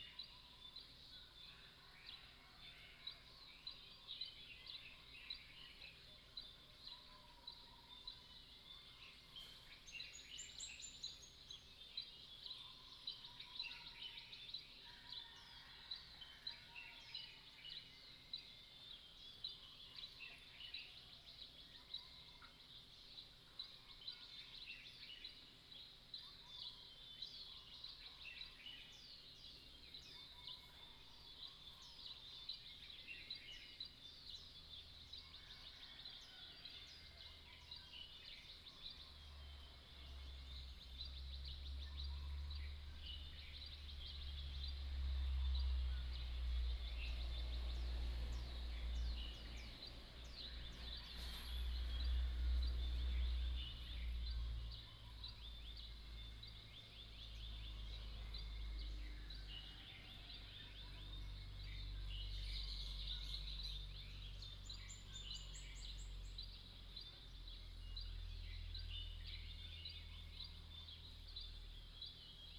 Early morning, Chicken sounds, Birdsong, Dogs barking, at the Hostel